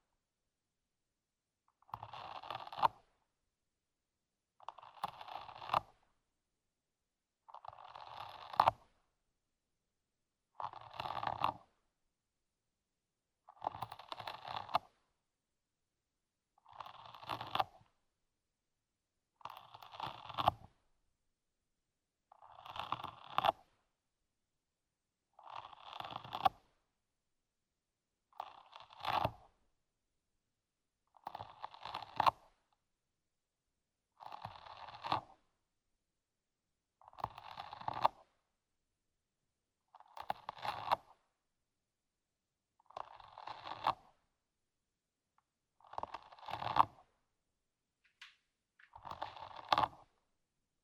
{"title": "Mont-Saint-Guibert, Belgique - Famished snail eating", "date": "2016-05-31 21:40:00", "description": "Recording of a famished snail, eating a carrot. This poor snail was completely starving in the garden. I embarked him and I gave him a good carrot. At the beginning, he was extremely afraid, but a few time after, he was so happy of this improvised meal !\nWhat you hear is the radula, the snail tongue, scratching methodically the carrot. It was completely magical to hear him on the first seconds, as this is normally inaudible, I let him eating a banquet ! I named him \"Gerard\" the Snail ;-)", "latitude": "50.64", "longitude": "4.61", "altitude": "116", "timezone": "Europe/Brussels"}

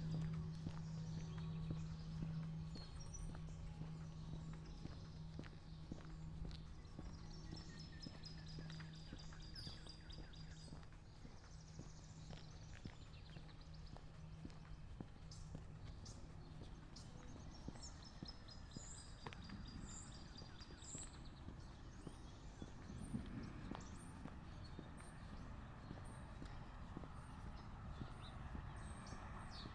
{"title": "Lakewood Village Park", "date": "2011-04-10 06:42:00", "description": "Early morning birds.", "latitude": "36.59", "longitude": "-94.78", "altitude": "237", "timezone": "America/Chicago"}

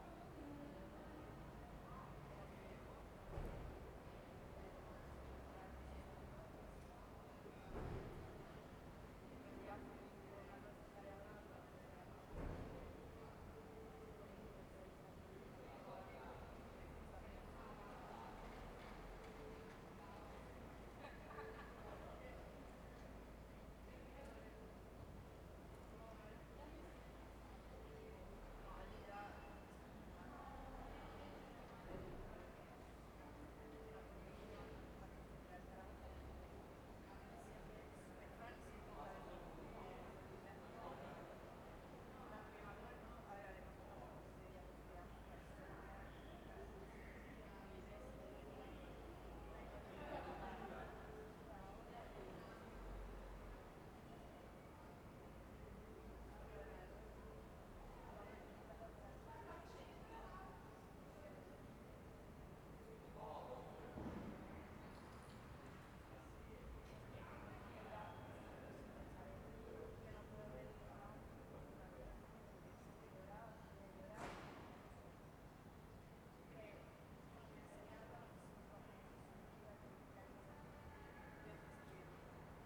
{"title": "Ascolto il tuo cuore, città. I listen to your heart, city. Several chapters **SCROLL DOWN FOR ALL RECORDINGS** - Terrace at late sunset in the time of COVID19 Soundscape", "date": "2020-06-14 21:15:00", "description": "\"Terrace at late sunset in the time of COVID19\" Soundscape\nChapter CVII of Ascolto il tuo cuore, città. I listen to your heart, city\nSunday, June 14th 2020. Fixed position on an internal terrace at San Salvario district Turin, Turin ninety-six days after (but day forty-two of Phase II and day twenty-nine of Phase IIB and day twenty-three of Phase IIC) of emergency disposition due to the epidemic of COVID19.\nStart at 9:15 p.m. end at 10:05 p.m. duration of recording 50'30'', Sunset time at 9:21 p.m.\nGo to similar recording, Chapter VIII, March 14th", "latitude": "45.06", "longitude": "7.69", "altitude": "245", "timezone": "Europe/Rome"}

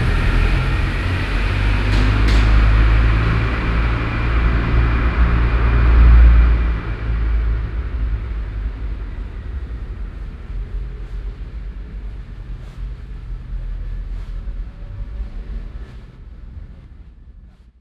{"title": "langenfeld, turnerstr, tiefgarage ausfahrt", "description": "feuerwehrmartinshorn dann resonanzen bei ausfahrt aus tiefgarage - mittags\nsoundmap nrw/ sound in public spaces - social ambiences - in & outdoor nearfield recordings", "latitude": "51.11", "longitude": "6.95", "altitude": "52", "timezone": "GMT+1"}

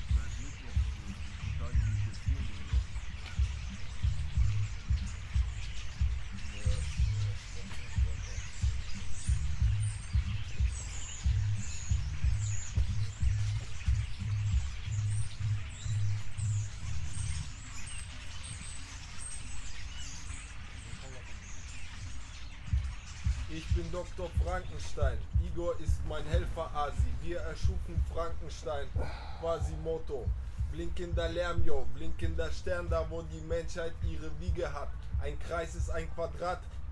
{"title": "Tempelhofer Park, Berlin - birds, drone and sudden rap", "date": "2014-08-31 18:35:00", "description": "i was listening to the chatter of the starlings in the bushes, happily ignoring the distant beats from a sound system, when an artist showed up behind me and started to improvise... the birds seemingly didn't appreciate his performance.\n(SD702, 2xNT1)", "latitude": "52.48", "longitude": "13.40", "altitude": "45", "timezone": "Europe/Berlin"}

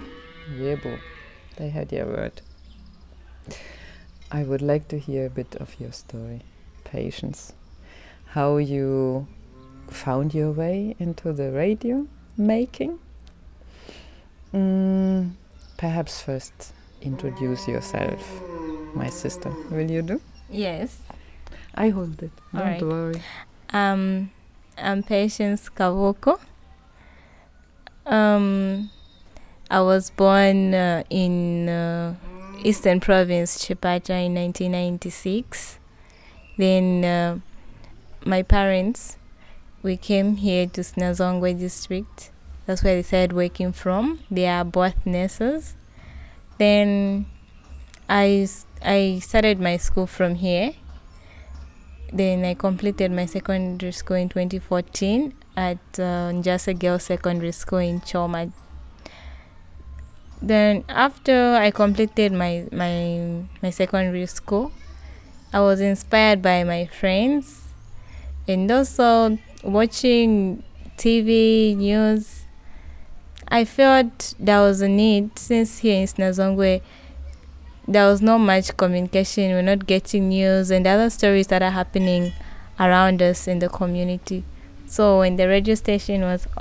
{"title": "Sinazongwe Primary School, Sinazongwe, Zambia - Im Patience Kabuku at Zongwe FM...", "date": "2016-08-06 10:30:00", "description": "We are sitting together with Patience Kabuku in the shade of one of the doorways to a classroom at Sinazonwe Primary School. The door to Zongwe FM studio is just across the yard from us. It’s Saturday afternoon; you’ll hear the singing from church congregations somewhere nearby. A match at the football pitch is due; occasionally, a motorcycle-taxi crosses the school grounds and interrupts our conversation for a moment. Patience is one of the youth volunteers at Zongwe FM community radio. After completing her secondary schooling in 2014, she started joining the activities at Zongwe, she tells us....\nThe recording forms part of THE WOMEN SING AT BOTH SIDES OF THE ZAMBEZI, an audio archive of life-story-telling by African women.", "latitude": "-17.25", "longitude": "27.45", "altitude": "497", "timezone": "Africa/Lusaka"}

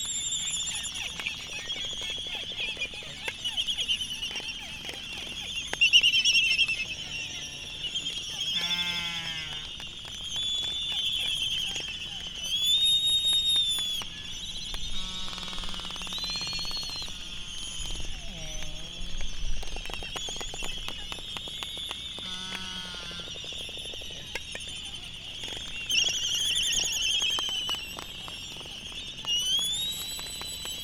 {"title": "United States Minor Outlying Islands - Laysan albatross dancing ...", "date": "2012-03-14 19:01:00", "description": "Laysan albatross dancing ... Sand Island ... Midway Atoll ... fur cover tennis table bat with lavalier mics ... mini jecklin disc ... sort of ..? just rocking ... background noise ... Midway traffic ...", "latitude": "28.22", "longitude": "-177.38", "altitude": "9", "timezone": "GMT+1"}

